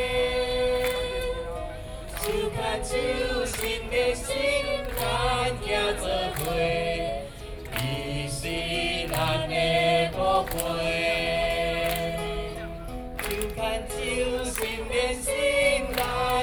National Chiang Kai-shek Memorial Hall, Taipei - Cheer
A long-time opponent of nuclear energy Taiwanese folk singer, Sony PCM D50 + Soundman OKM II